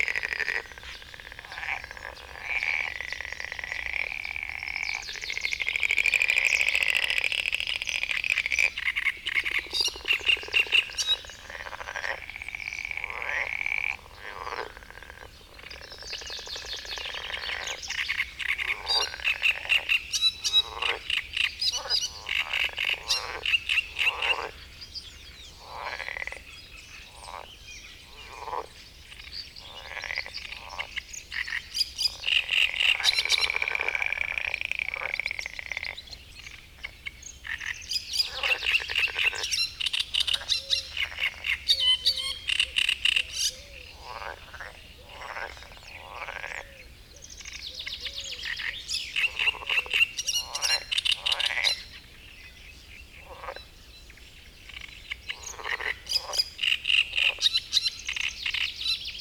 Gmina Tykocin, Poland - great reed warbler and marsh frogs soundscape ...
Kiermusy ... great reed warbler singing ... frog chorus ... sort of ... pond in hotel grounds ... open lavalier mics either side of a furry tennis bat used as a baffle ... warm sunny early morning ...
13 May 2014, 05:10